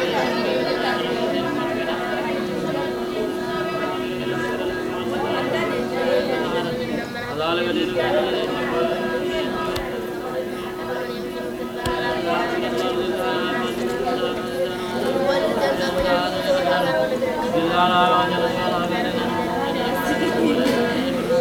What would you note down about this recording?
Turbo Qur'an. During ramadan boys and young men take out on the streets and start to recite the Holy Book at an incredible speed. Here some examples